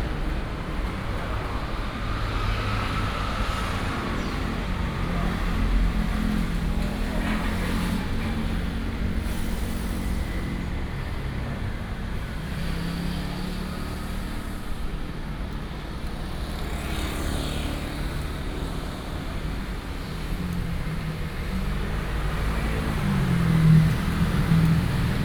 Yangming St., Banqiao Dist., New Taipei City - walking in the Street
walking in the Street, Footsteps and Traffic Sound
New Taipei City, Taiwan, 29 July